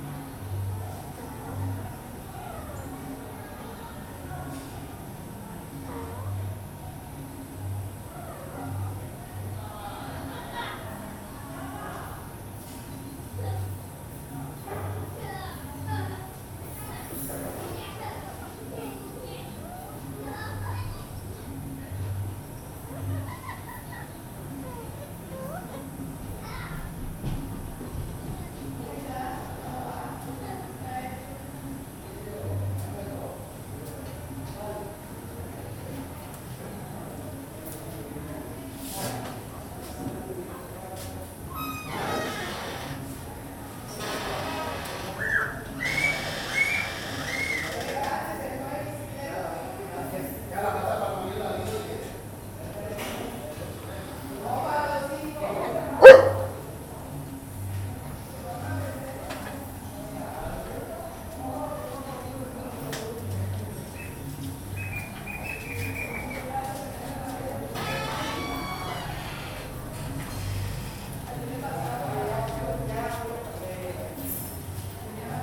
El taller del maestro artesano y orfebre Eligio Rojas. Tiene dos perros y una lora.